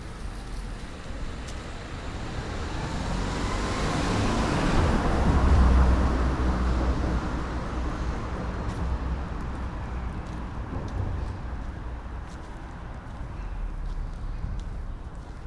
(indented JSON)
{
  "title": "Sophienstraße, Berlin, Germany - walk around small park",
  "date": "2013-05-17 19:58:00",
  "description": "walk between trees and around church, church bells, raindrops, sandy and grass paths, birds, traffic",
  "latitude": "52.53",
  "longitude": "13.40",
  "altitude": "45",
  "timezone": "Europe/Berlin"
}